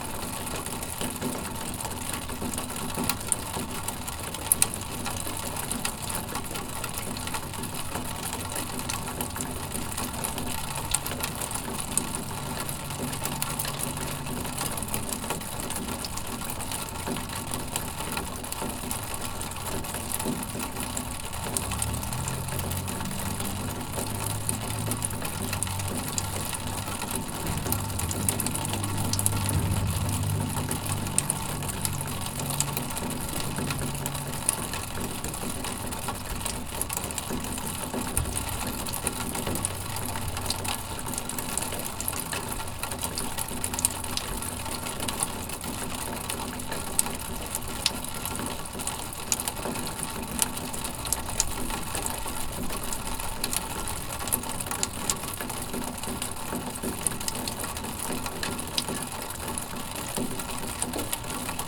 Galena St, Prairie Du Sac, WI, USA - Snowmelt
Water from melting snow running down an aluminum downspout in early December. Recorded with a Tascam DR-40 Linear PCM Recorder.
2 December 2018